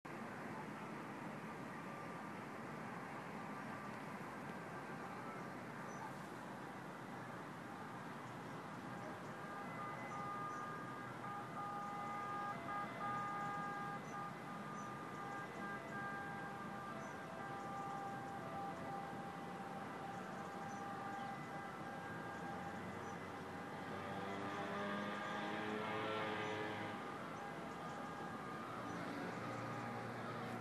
Riva Ostiense, 5
Sounds of the River, and streets Ponte dellIndustria and Lungotevere dei Papareschi